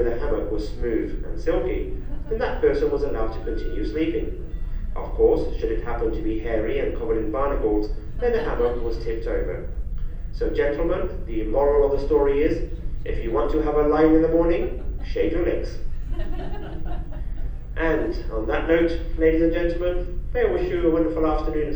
Western Approaches, North Atlantic Ocean. - Announcement
Recorded while walking around the art gallery on the Queen Mary 2 on the final full day of an Atlantic crossing from New York. In the morning we will be ashore at 7am in Southampton.
MixPre 3 with 2 x Beyer Lavaliers
13 June 2019, 12:00